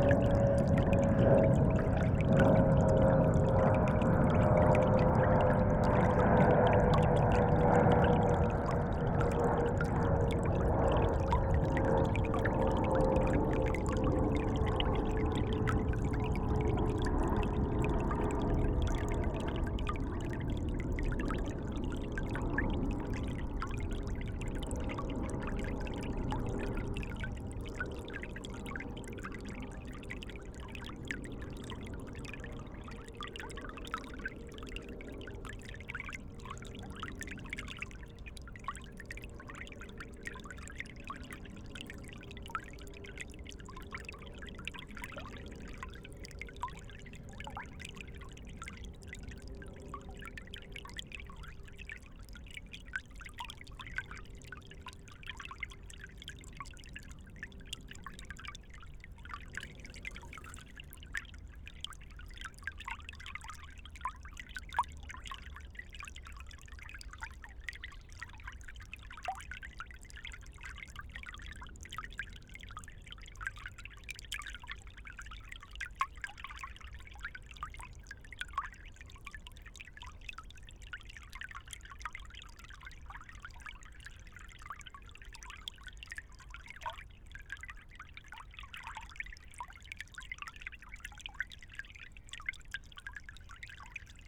Eichepark, Berlin, Deutschland - river Wuhle, water flow, aircraft
sound of the river Wuhle, about 1km after its source. departing aircraft crossing from th east.
(SD702, AT BP4025)
Berlin, Germany, 28 March 2015, ~17:00